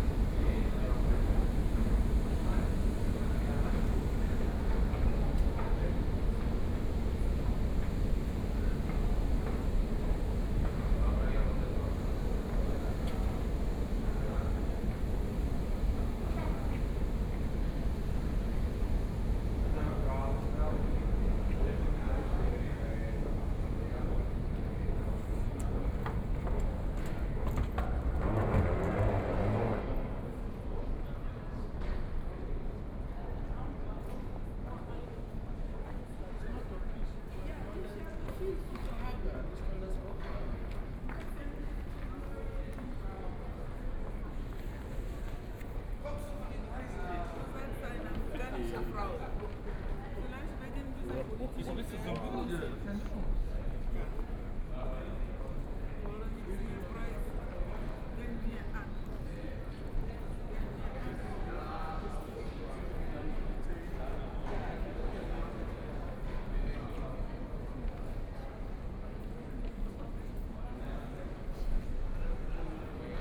Ludwigsvorstadt-Isarvorstadt, Munich - Walking in the station
Hauptbahnhof, U Bahn, Line U5, Walking in the station
Munich, Germany, 6 May 2014, 21:18